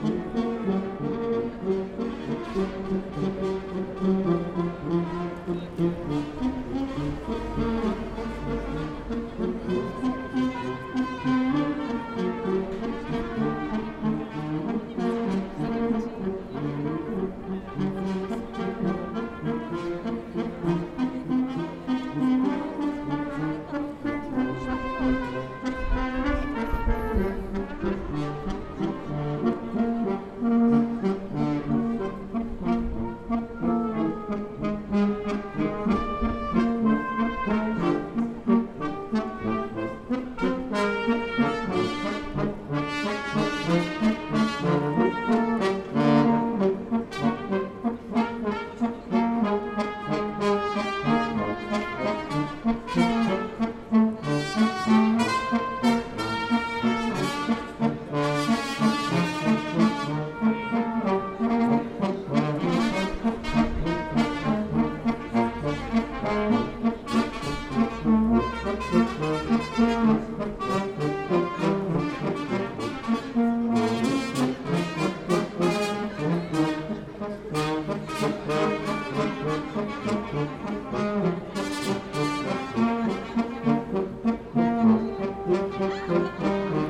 {"title": "Václavské náměstí Praha, Česká republika - Rumanian Gypsy street musicians", "date": "2013-10-15 15:27:00", "description": "Three musicians from South of Romania playing brass.", "latitude": "50.08", "longitude": "14.43", "altitude": "211", "timezone": "Europe/Prague"}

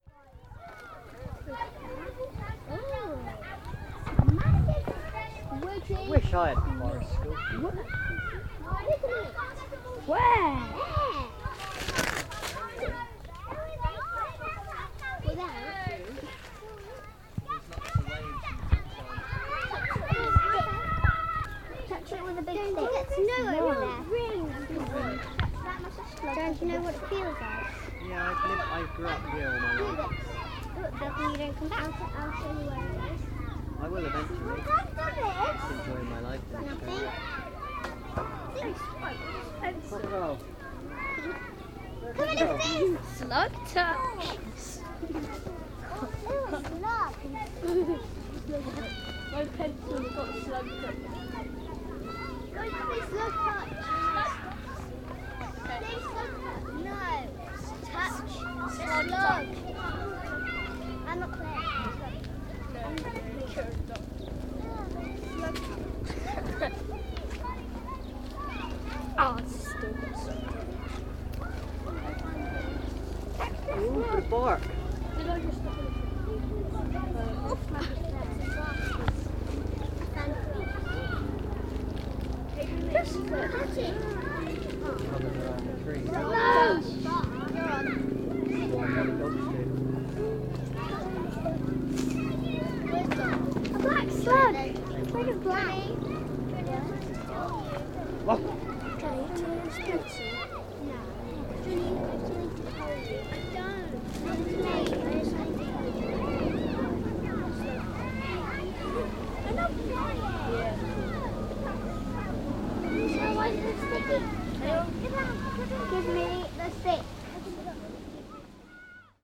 {"title": "Piddle Valley School - Searching for minibeasts", "date": "2015-07-15 14:00:00", "description": "Sounds recorded whilst searching for minibeasts in the forest school.\nRecorded using an H4N zoom and NTG2 Rode microphone.\nSounds in Nature workshop run by Gabrielle Fry.", "latitude": "50.79", "longitude": "-2.42", "altitude": "103", "timezone": "Europe/London"}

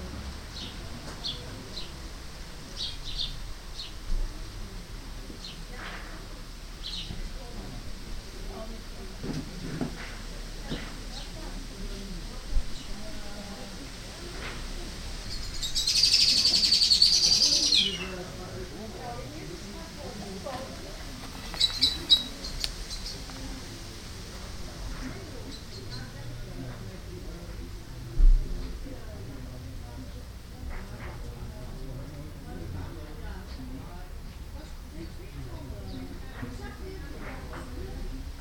{"title": "Haaksbergen, Nederland - In the backyard 1", "date": "2012-05-26 16:06:00", "description": "Birds, wind and neighbours chatting in the backyard of my parents house.\nZoom H2 recorder with SP-TFB-2 binaural microphones.", "latitude": "52.16", "longitude": "6.73", "altitude": "24", "timezone": "Europe/Amsterdam"}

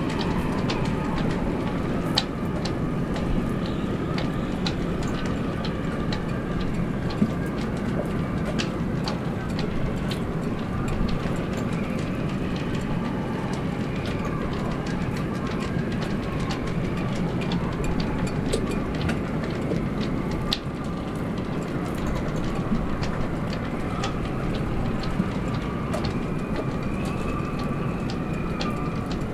{"title": "Ved Fjorden, Struer, Danmark - Struer Harbor sound of heavy wind and sailboats", "date": "2022-09-29 12:30:00", "description": "Heavy wind and sounds from sailboats mast. Recorded with rode NT-SF1 Ambisonic Microphone. Øivind Weingaarde", "latitude": "56.49", "longitude": "8.59", "altitude": "1", "timezone": "Europe/Copenhagen"}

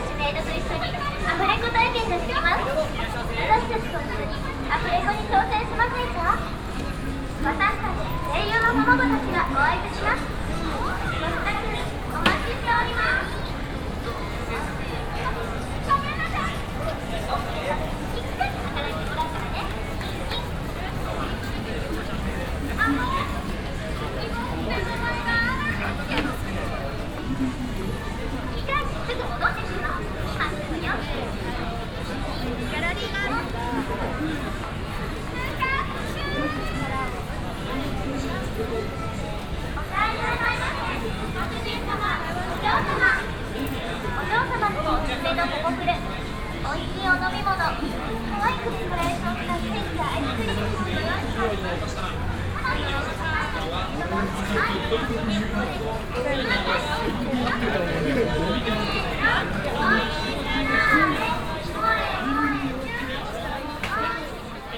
{"title": "tokyo, akihabara, street atmosphere", "date": "2010-07-27 15:11:00", "description": "maid and manga action on the streets trying to get customers for their shops and/or cafes\ninternational city scapes - social ambiences and topographic field recordings", "latitude": "35.70", "longitude": "139.77", "altitude": "16", "timezone": "Asia/Tokyo"}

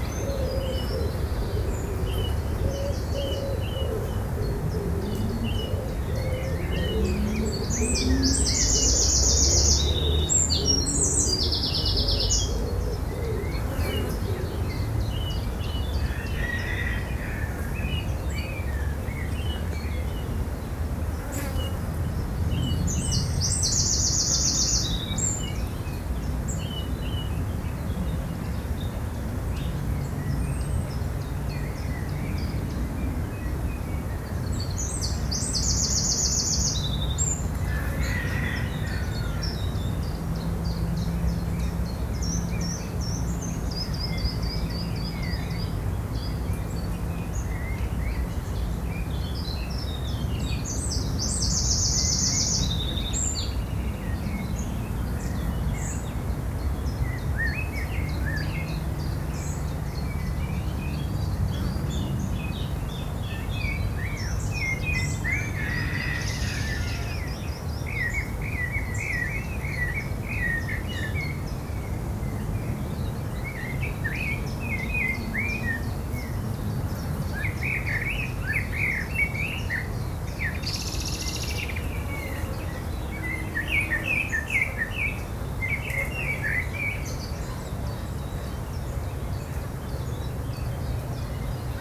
{"title": "ST Léonard", "date": "2011-04-22 16:15:00", "description": "a little path surrounded by trees, birds and insects.", "latitude": "50.70", "longitude": "1.62", "altitude": "23", "timezone": "Europe/Paris"}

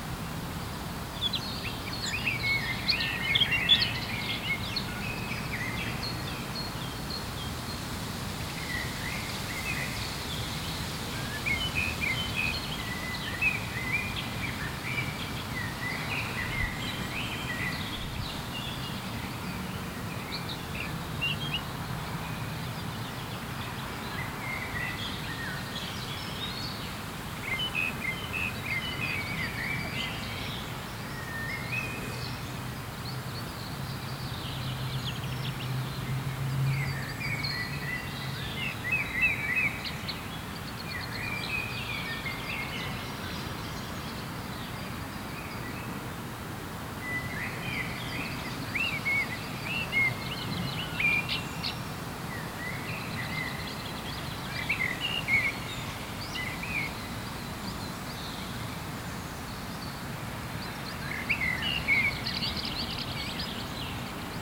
{"title": "Libin, Belgique - Birds and wind", "date": "2022-05-27 16:34:00", "description": "Tech Note : SP-TFB-2 binaural microphones → Olympus LS5, listen with headphones.", "latitude": "50.00", "longitude": "5.27", "altitude": "437", "timezone": "Europe/Brussels"}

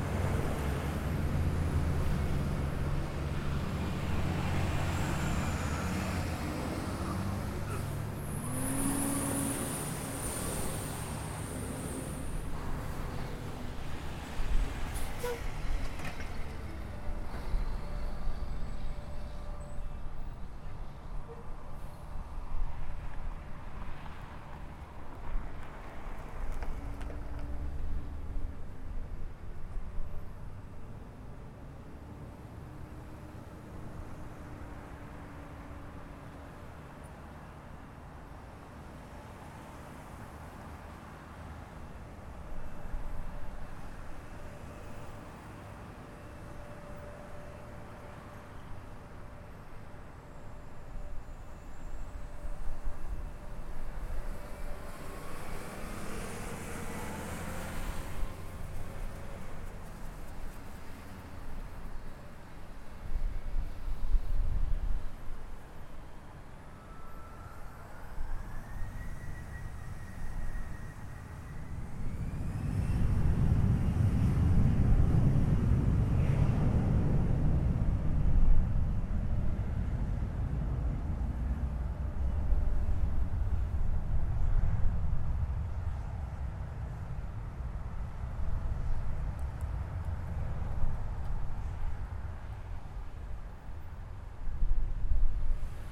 {"title": "East Elmhurst, Queens, NY, USA - Airplane Jets Revving", "date": "2017-03-03 11:30:00", "description": "Microphone pointed at planes revving engines on tarmac", "latitude": "40.77", "longitude": "-73.89", "timezone": "America/New_York"}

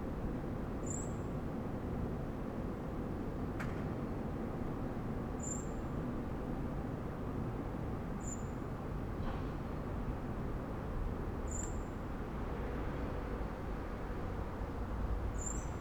{"title": "Köln, Maastrichter Str., backyard balcony - musician rehearsing in the backyard", "date": "2015-02-03 17:10:00", "description": "winter afternoon, a musiscian is rehearsing in the backyard\n(Sony PCM D50)", "latitude": "50.94", "longitude": "6.93", "altitude": "57", "timezone": "Europe/Berlin"}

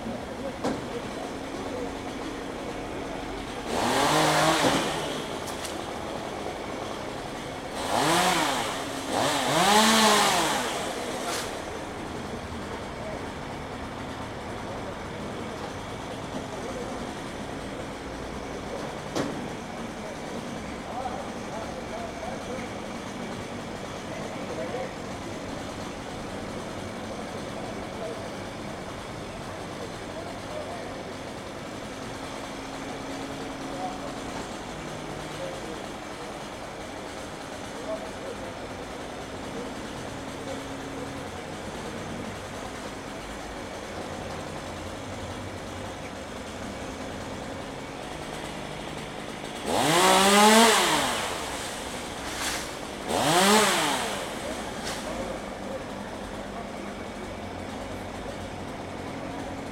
{"title": "Aleja kralja Zvonimira, Varaždin, Croatia - Tree trimming", "date": "2020-09-23 11:43:00", "description": "Workers trimming a tree using a truck crane and a chainsaw. People talking in the background. Recorded with Zoom H2n (MS, on a tripod) from the rooftop of a nearby building.", "latitude": "46.30", "longitude": "16.34", "altitude": "172", "timezone": "Europe/Zagreb"}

